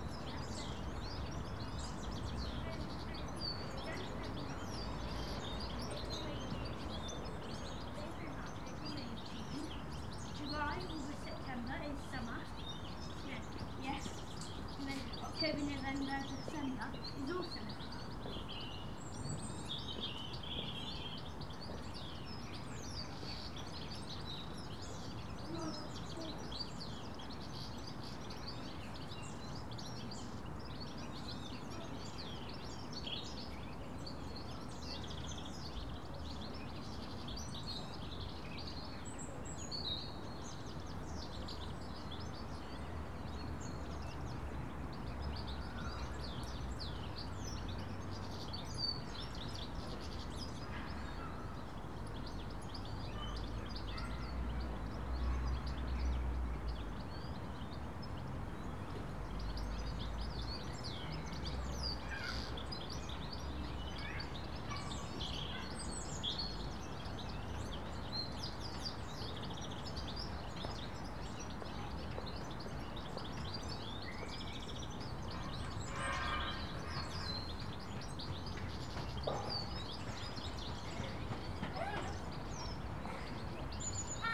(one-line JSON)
{"title": "Birdcage Walk, Clifton, Bristol, UK - Birds in Birdcage Walk", "date": "2015-02-11 15:53:00", "description": "Birds and people in Birdcage Walk\n(zoom H4n)", "latitude": "51.45", "longitude": "-2.61", "altitude": "76", "timezone": "Europe/London"}